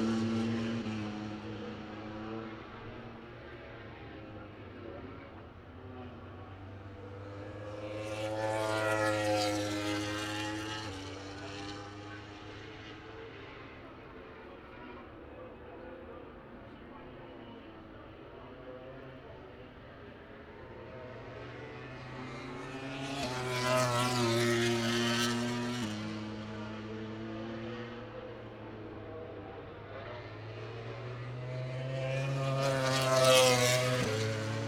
Silverstone Circuit, Towcester, UK - British Motorcycle Grand Prix 2018 ... moto grand prix ...
British Motorcycle Grand Prix ... moto grand prix ... free practice two ... copse ... lavalier mics clipped to sandwich box ...
24 August